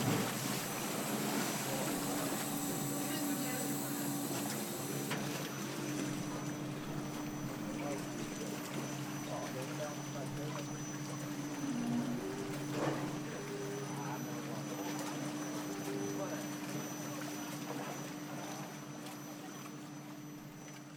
Vaxön-Tynningö-Bogesund-Granholmen, Vaxholm, Suecia - cableway at sea
Curiós transport marítim que es mou gràcies a un cable.
Curious shipping moving through a cable.
Curioso transporte marítimo que se mueve gracias a un cable.
Vaxholm, Sweden, 15 August 2016